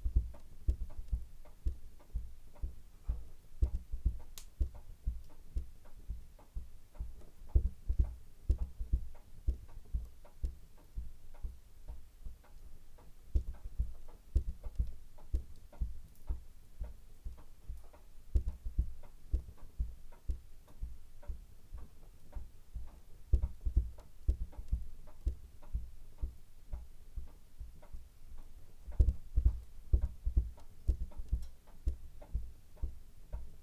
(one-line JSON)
{"title": "Croft House Museum, Boddam, Dunrossness, Shetland Islands, UK - Rocking an old wooden crib in front of a peat fire", "date": "2013-08-01 11:00:00", "description": "Listening to oral histories from Shetland I was struck by one woman's account of how it had been her job as a young girl to rock her siblings in the crib when they were babies, and how she had made this boring task more interesting by knitting socks at the same time. I was interested in hearing for myself the domestic sound of the rhythms of such a crib, because its rhythm would have been part of the sonic world which this knitter inhabited while she knitted. Staff at the Shetland Museum told me the best place to record the crib would be at the Croft House Museum, as this low building with lack of electricity and open peat fire would most closely resemble the type of dwelling to which the woman speaking about the past was probably referring. In The Croft House Museum I also discovered the sound of a large clock on the mantelshelf; apparently this type of clock was very fashionable in Shetland at one time.", "latitude": "59.91", "longitude": "-1.29", "altitude": "29", "timezone": "Europe/London"}